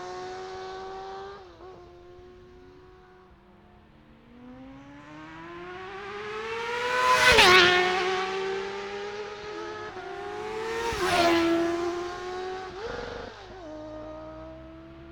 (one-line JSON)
{"title": "Scarborough, UK - motorcycle road racing 2012 ...", "date": "2012-04-15 09:27:00", "description": "125-400cc practice two stroke/four stroke machines ... Ian Watson Spring Cup ... Olivers Mount ... Scarborough ... binaural dummy head recording ... grey breezy day ...", "latitude": "54.27", "longitude": "-0.41", "altitude": "147", "timezone": "Europe/London"}